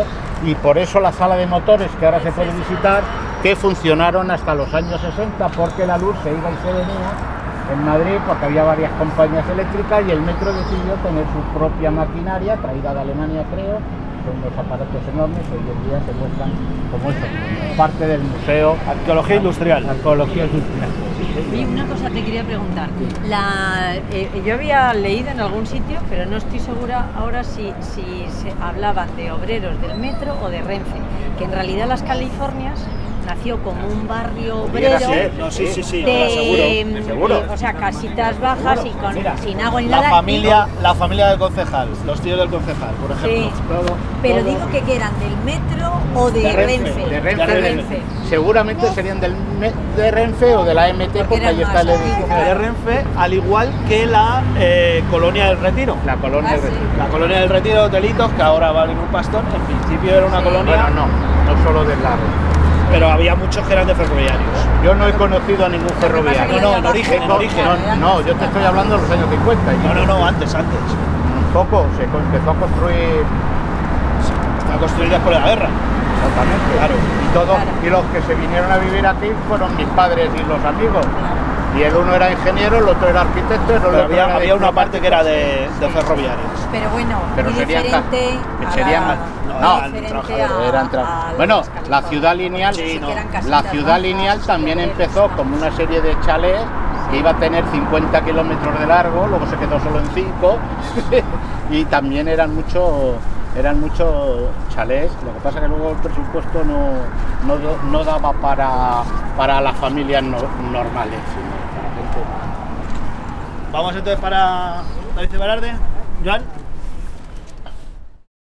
Pacífico, Madrid, Madrid, Spain - Pacífico Puente Abierto - Transecto - 07 - Calle Caridad
Pacífico Puente Abierto - Transecto - Calle Caridad